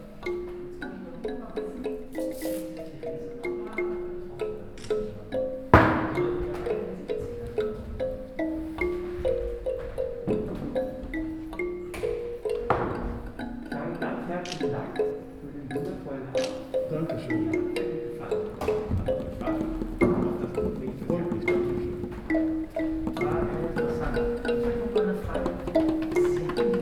Heinrich Kleist Forum, VHS, Hamm, Germany - spontaneous after-event jam
We're in the large hall of the city library/ VHS building. It’s probably been the last event here before the second lock down begins on Monday… For the past 90 minutes we listened to Hermann Schulz's storytelling, a first reading from his manuscript for a yet to be published book. Joseph Mahame had accompanied Schulz’s journey with his musical stories and sounds. While Herrmann Schulz is still talking with interested listeners, a spontaneous jam session unfolds…
find the recordings of the event archived here: